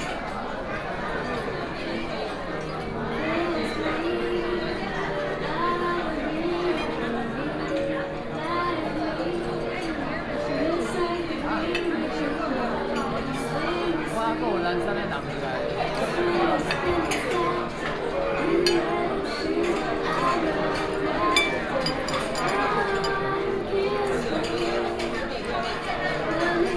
New Taipei City Government, Taiwan - In the restaurant
in the Wedding restaurant, Binaural recordings, Sony PCM D50+ Soundman OKM II